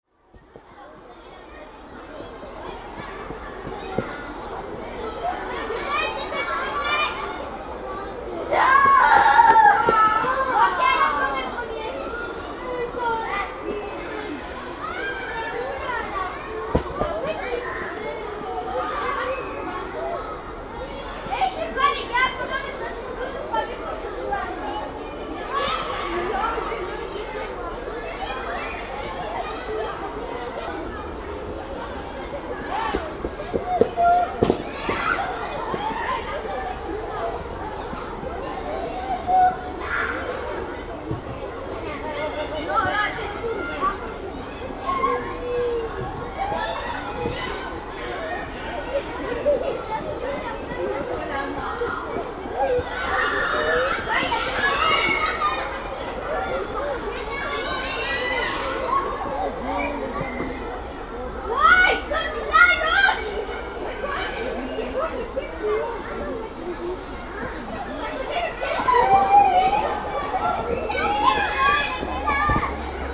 Sounds of the Steiner school. Children are playing, quite far, in the woods.
Court-St.-Étienne, Belgium, 18 September 2015